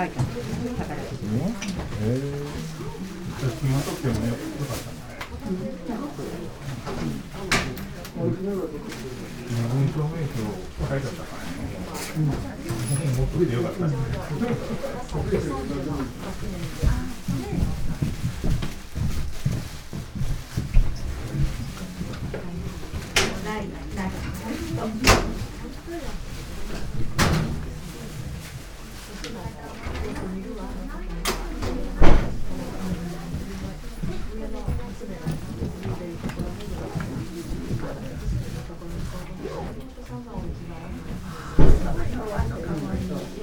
waiting room, Shugakuin Imperial Villa, Kyoto - people
Kyōto-fu, Japan, 1 November 2014, 14:46